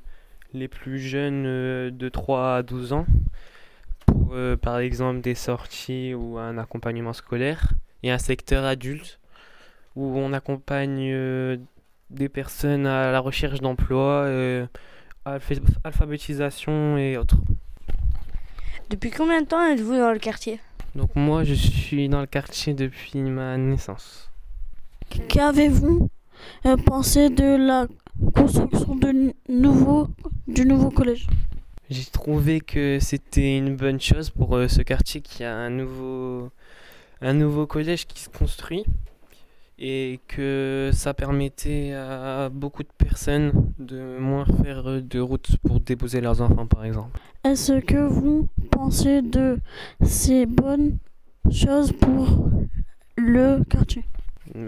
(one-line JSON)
{"title": "Rue dOran, Roubaix, France - Centre social ECHO", "date": "2019-05-07 11:39:00", "description": "Interview de Sofiane, un lycéen en stage", "latitude": "50.70", "longitude": "3.19", "altitude": "35", "timezone": "Europe/Paris"}